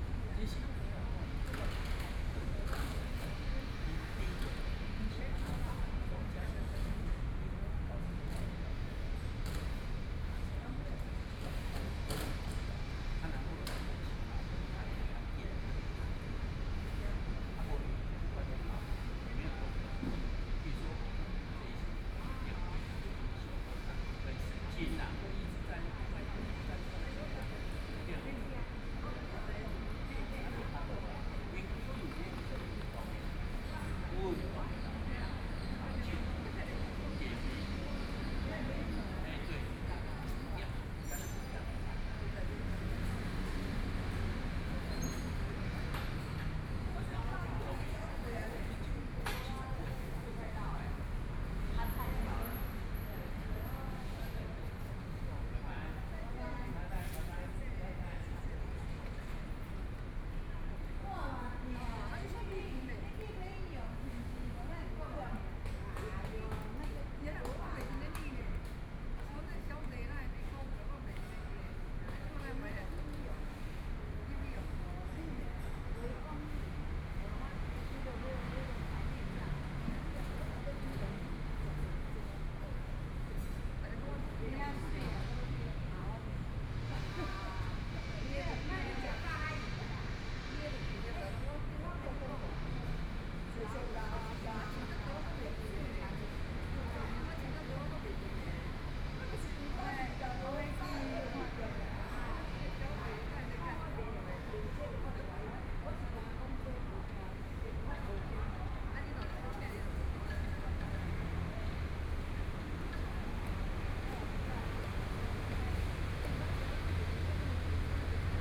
XinXing Park, Taipei City - Morning in the park
Morning in the park, Traffic Sound, Environmental sounds, Birdsong, A group of elderly people chatting
Binaural recordings
Taipei City, Taiwan, 27 February 2014